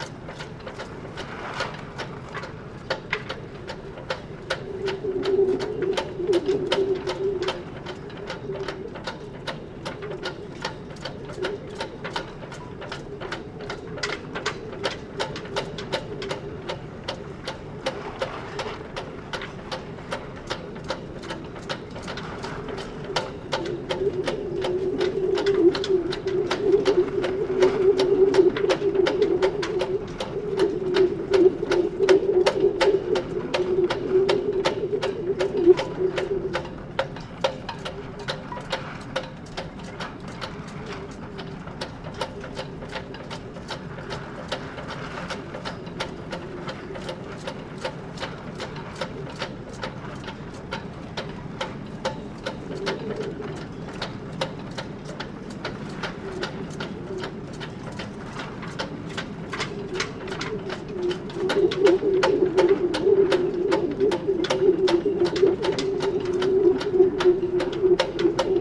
Howling flagpoles, Pirita Harbor Tallinn
flagpoles knocking and howling in the wind on the Pirita Harbor